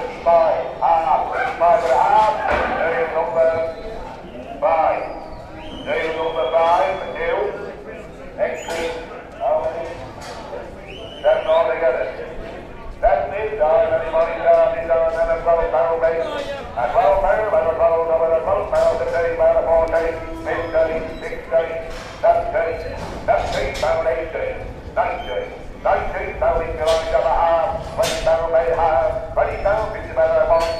sheep auction at Hawes in the Yorkshire Dales
Hawes, North Yorkshire, UK